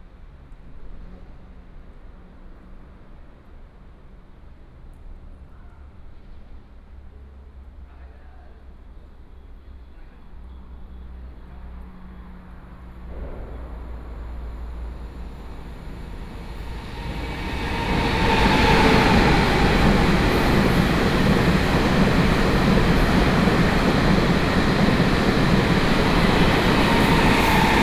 Xiangshan Dist., Hsinchu City - next to the railway
next to the railway, traffic sound, Under the elevated road, The train passes by, Binaural recordings, Sony PCM D100+ Soundman OKM II